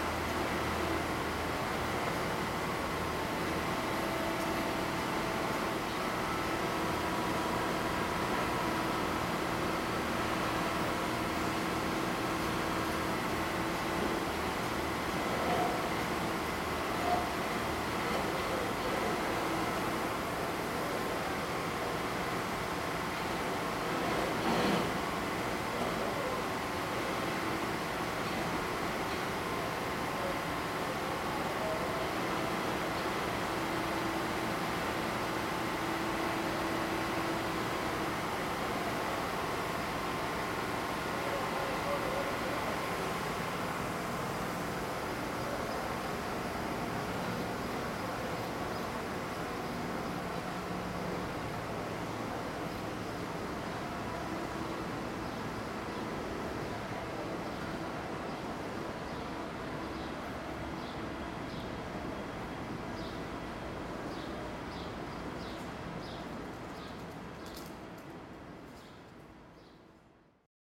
zurich main station, building site - zurich main station, reparation works
platform and railtrack being renovated. machines, workers etc. recorded june 16, 2008. - project: "hasenbrot - a private sound diary"
Zurich, Switzerland